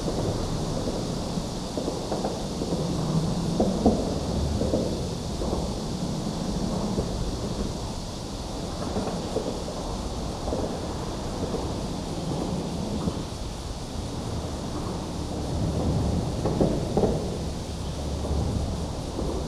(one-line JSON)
{"title": "Zhongli Dist., Taoyuan City - traffic sound", "date": "2017-07-28 07:03:00", "description": "Cicada cry, traffic sound, Under the highway, Zoom H2n MS+ XY", "latitude": "24.97", "longitude": "121.22", "altitude": "121", "timezone": "Asia/Taipei"}